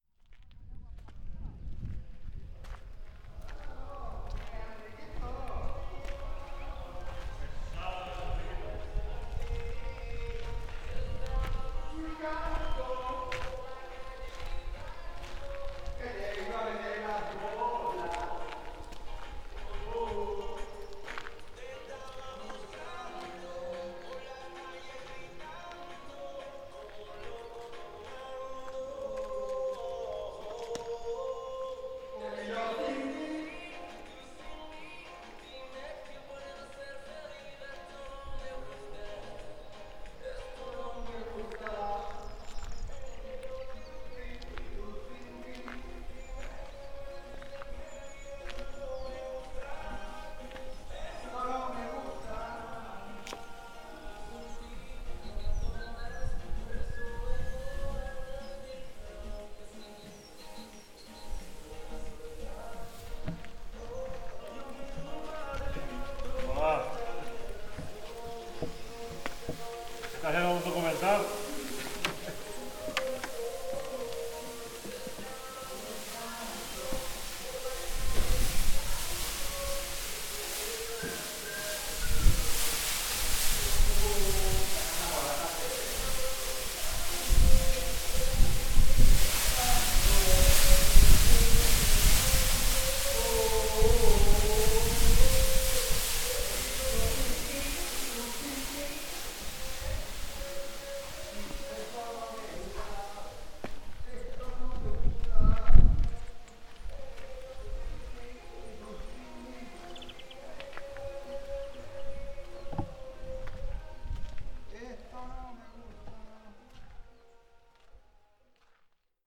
Cerezales del Condado, León, España - Taller MT Trabajadores cantando
En la obra de las nuevas instalaciones, los operarios cantando y escuchando la radio mientras trabajan
León, Spain, 13 June 2015, 11:17